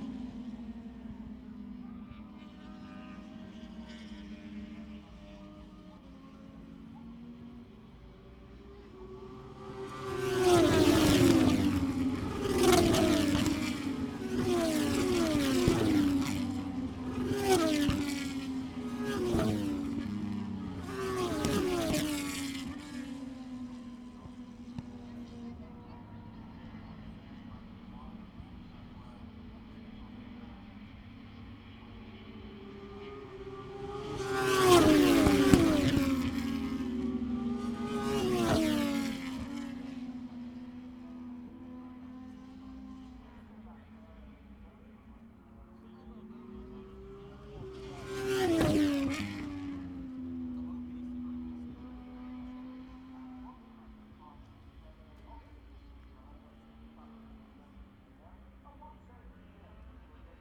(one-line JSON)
{"title": "Silverstone Circuit, Towcester, UK - british motorcycle grand prix 2019 ... moto two ... fp2 ...", "date": "2019-08-23 15:10:00", "description": "british motorcycle grand prix 2019 ... moto two ... free practice two ... maggotts ... lavalier mics clipped to bag ... bikes often hitting their rev limiter ...", "latitude": "52.07", "longitude": "-1.01", "altitude": "158", "timezone": "Europe/London"}